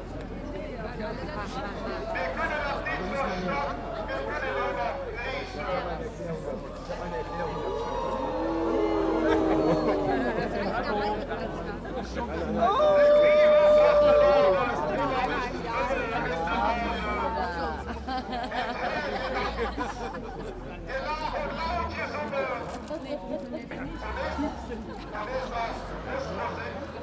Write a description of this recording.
25.02.2009 0:15 mitternächtliche rituelle öffentliche verbrennung des nubbels in der bismarckstr., damit endet der karneval / ritual public burning of the nubbel at midnight, end of carnival.